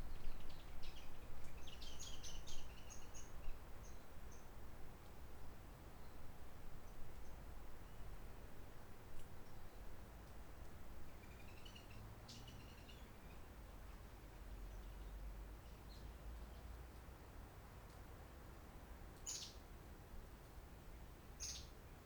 Forêt Roche Merveilleuse, Réunion - 20181120 11h34 lg78rvsa20 ambiance sonore Forêt Matarum CILAOS
INDEX
00:00:00 11h34 à lg78rvsa2084
00:15:12 z'oiseaux verts
00:17:35 passage touristes et plus de oiseaux blancs et verts.
00:18:26 début bruit hélicocoptère de type B4 fin 00:20:00
arrêt relatif des oiseaux.
00:22:12 merle et oiseaux-verts
00:22:58 hélicoptère de type écureuil
00:24:25 fin hélico
arrêt relatif des oiseaux.
00:27:40 reprise oiseaux
00:28:40 peu d'oiseaux
00:31:30 touristes, peu d'oiseaux